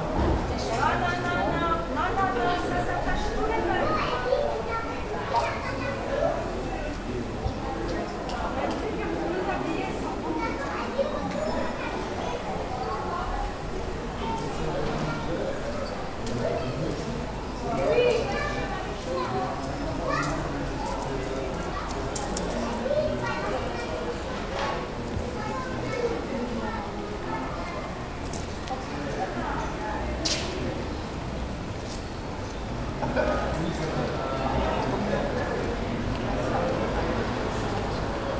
Rue du Jambon, Saint-Denis, France - Rue du Jambon Graffiti Area
This recording is one of a series of recording, mapping the changing soundscape around St Denis (Recorded with the on-board microphones of a Tascam DR-40).